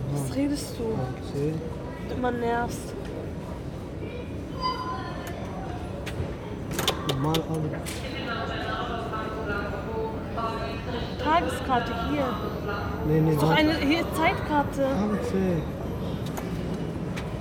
Gesundbrunnen, Berlin, Deutschland - Gesundbrunnen, Berlin - Debate at the ticket vending machine
Two girls and a guy at the ticket vending machine on a station platform, trying to figure out what option is the right one for a 1-day ticket ("Tageskarte"). [I used the Hi-MD-recorder Sony MZ-NH900 with external microphone Beyerdynamic MCE 82]
Berlin, Germany